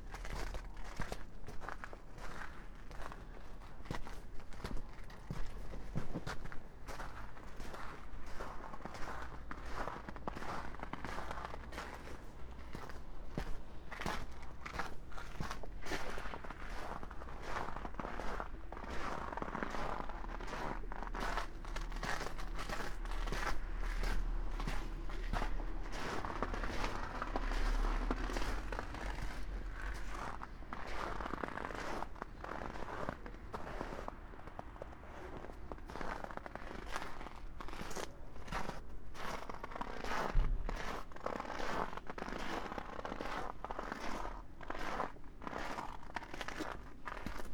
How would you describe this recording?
village Weyer, Villmar, walking around the church on frozen ground, -12°, (Sony PCM D50, Primo EM172)